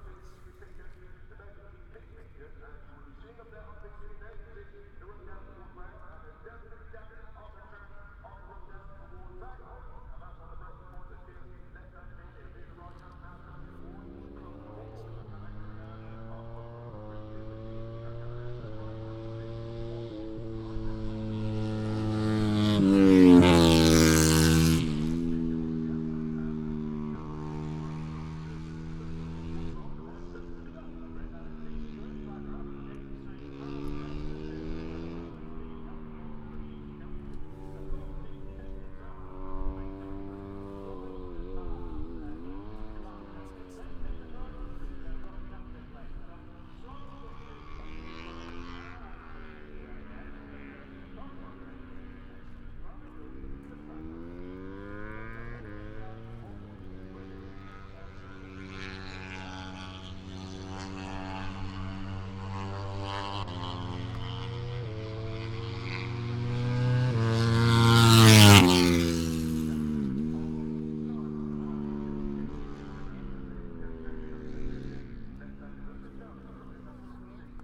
British Motorcycle Grand Prix 2018 ... moto one ... free practice three ... maggotts ... lavalier mics clipped to sandwich box ...
Towcester, UK, 25 August